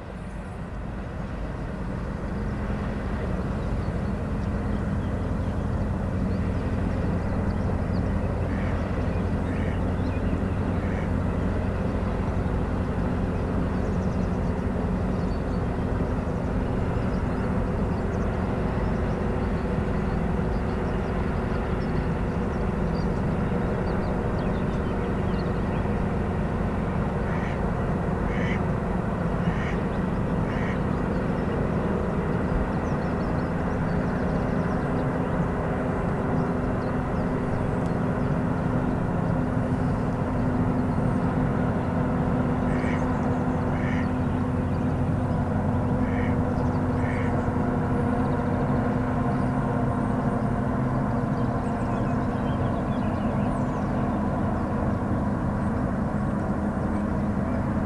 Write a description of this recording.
schiffe, rhein, wasser, fahhrrad, wellen, plätschern